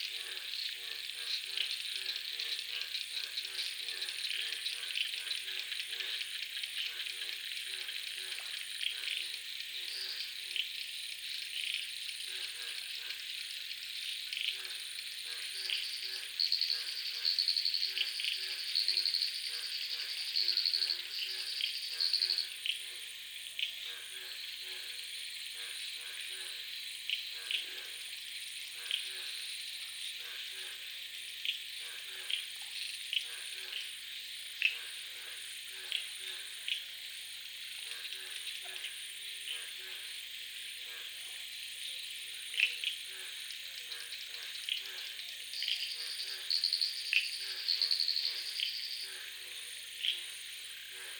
{"title": "Koforidua, Ghana - Suburban Ghana Soundscapes 3: the Pond", "date": "2022-04-22 04:00:00", "description": "A part of field recordings for soundscape ecology research and exhibition.\nRhythms and variations of vocal intensities of species in sound. Hum in sound comes from high tension cables running near the pond.\nRecording format: Binaural.\nRecording gear: Soundman OKM II into ZOOM F4.\nDate: 22.04.2022.\nTime: Between 00 and 5 AM.", "latitude": "6.05", "longitude": "-0.24", "altitude": "165", "timezone": "Africa/Accra"}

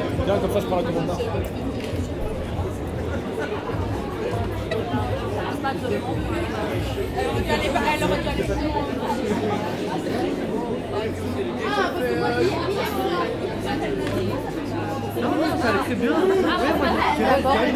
{"title": "Namur, Belgique - Crowded bars", "date": "2018-11-23 18:00:00", "description": "On the Vegetable-Market Square and gradually walking on the Chanoine-Descamps square. The bars are crowded. They welcome a student population who is already drunk. Many tables are overloaded with the meter, a term we use to describe a meter of beer glasses in a rack. Many students practice the \"affond\", it's a student tradition which consists of drinking a complete beer as quickly as possible. Then, the glass bottle is shattered on the ground or the plastic crushed with the foot. The atmosphere is crazy and festive, it screams everywhere.", "latitude": "50.46", "longitude": "4.86", "altitude": "85", "timezone": "Europe/Brussels"}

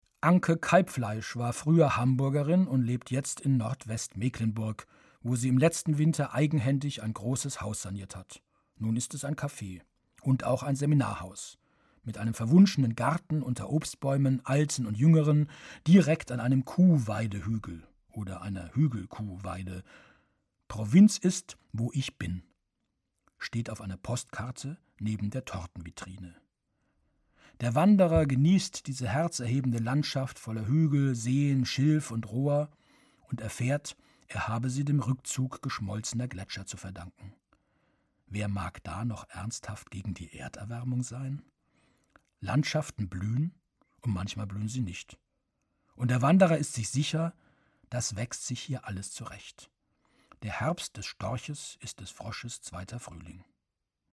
{"title": "kneese dorf - im café", "date": "2009-08-08 21:57:00", "description": "Produktion: Deutschlandradio Kultur/Norddeutscher Rundfunk 2009", "latitude": "53.66", "longitude": "10.97", "altitude": "44", "timezone": "Europe/Berlin"}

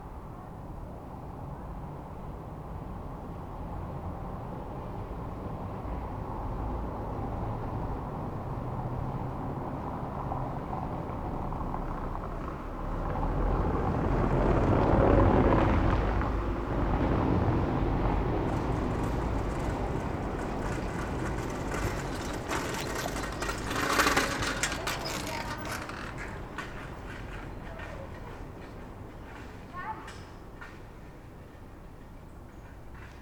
{"title": "Berlin: Vermessungspunkt Friedel- / Pflügerstraße - Klangvermessung Kreuzkölln ::: 21.08.2011 ::: 02:39", "date": "2011-08-21 02:39:00", "latitude": "52.49", "longitude": "13.43", "altitude": "40", "timezone": "Europe/Berlin"}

